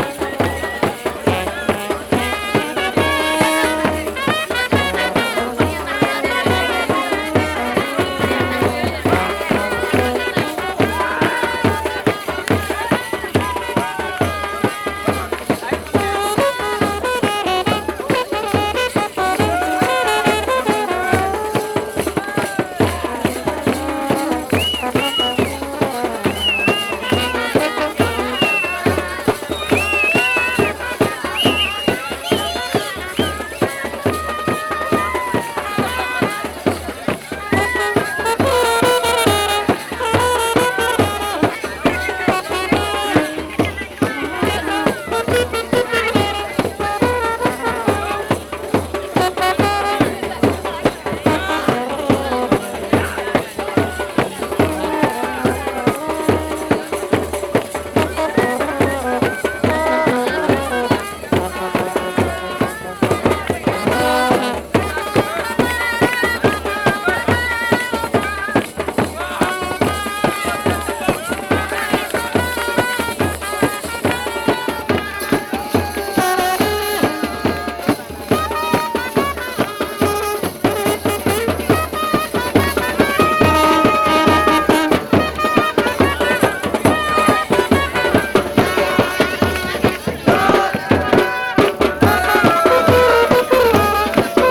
Ghats of Varanasi, Ghasi Tola, Varanasi, Uttar Pradesh, Indien - wedding procession
The recording catches a wedding procession late in the evening on the banks of the Ganges.
A generator was carried for the electric light decoration.
12 February, 22:31